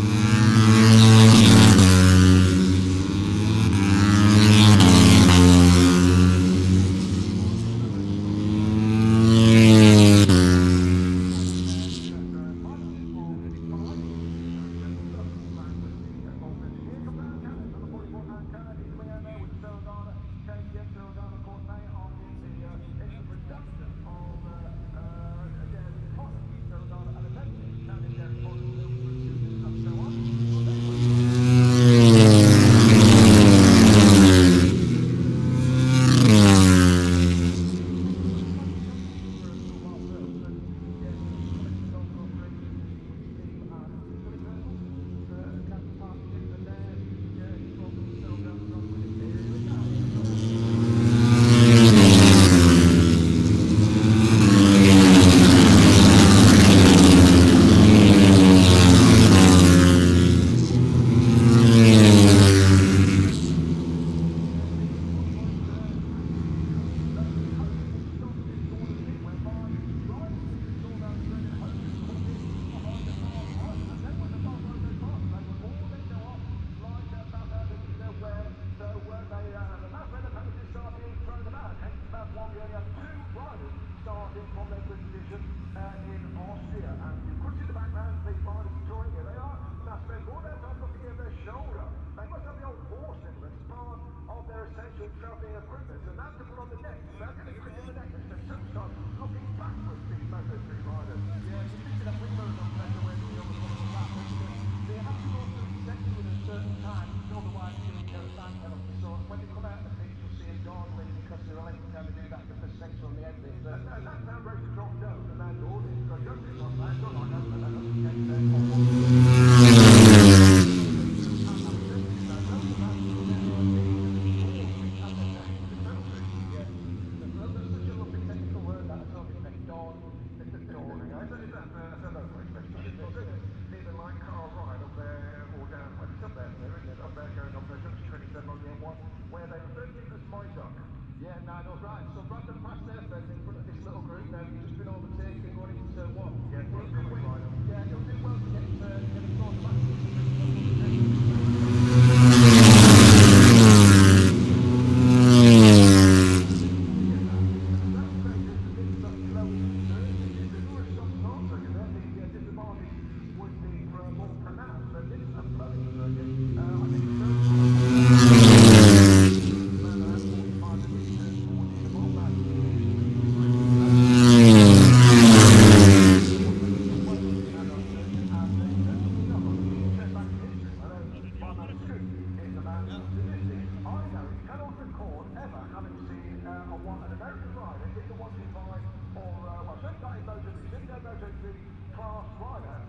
Silverstone Circuit, Towcester, UK - british motorcycle grand prix 2019 ... moto three ... fp3 ... contd ...
british motorcycle grand prix 2019 ... moto three ... free practice 3 contd ... maggotts ... lavalier mics clipped to bag ... backgound noise ...